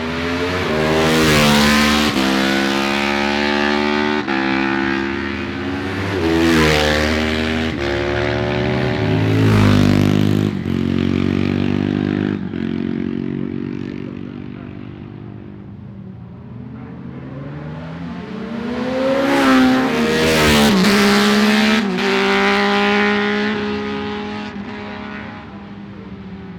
barry sheene classic 2009 ... practice ... one point stereo mic to minidisk ...
Scarborough, UK, 23 May 2009